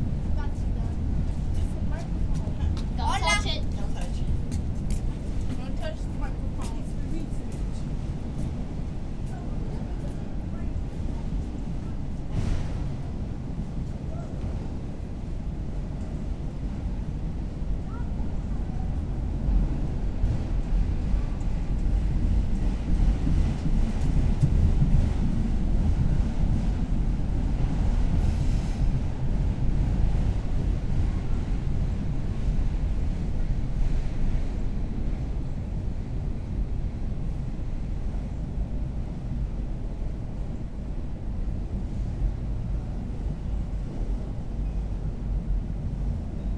On the trestle bridge of Tanyard Creek Park, which passes directly under a set of active railroad tracks. I arrived here just in time to record a train passing overhead, which can be heard as a low rumble with occasional banging and scraping. Other visitors passed through this area as well. The creek has a very faint trickle which can be heard when the train slows down and eventually halts.
[Tascam Dr-100mkiii & Primo Clippy EM-272]